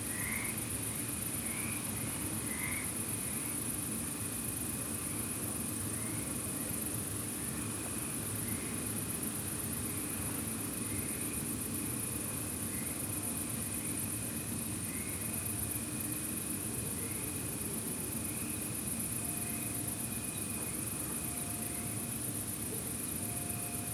SBG, Carrer de Lievant - Noche
Ambiente nocturno en el campo detrás de la fábrica. Ráfagas de viento agitan las ramas, un coro de ranas acompaña a las cigarras y grillos. Sonidos distantes del tráfico, ladridos, ganado y algún ave nocturna.